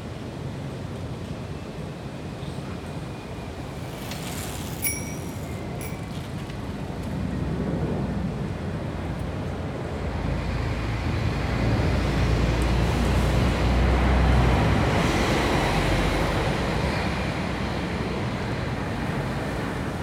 Wollankstraße, Soldiner Kiez, Wedding, Berlin, Deutschland - Wollankstraße 96, Berlin - Street traffic, in front of a bread shop
Wollankstraße 96, Berlin - Street traffic, in front of a bread shop. Wollankstraße is a street with heavy traffic.
[I used Hi-MD-recorder Sony MZ-NH900 with external microphone Beyerdynamic MCE 82]
Wollankstraße 96, Berlin - Straßenverkehr, vor einem Backshop. Die Wollankstraße ist eine stark befahrene Straße.
[Aufgenommen mit Hi-MD-recorder Sony MZ-NH900 und externem Mikrophon Beyerdynamic MCE 82]
Berlin, Germany, 14 October 2012